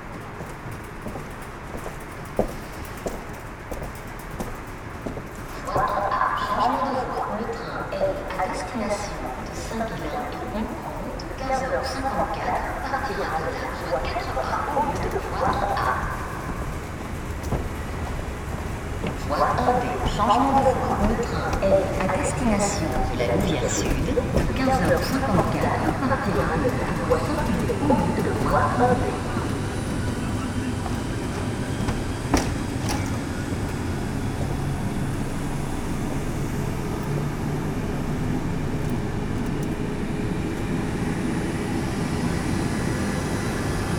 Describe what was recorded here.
Mons is a no-station. It's an horrible place, where construction works are engaged since years and years. Nothing is moving all around, like this would be a too complicate building. In this no man's land, some commuters take the train on the Christmas day. It's very quiet, as few people use a so maladjusted place.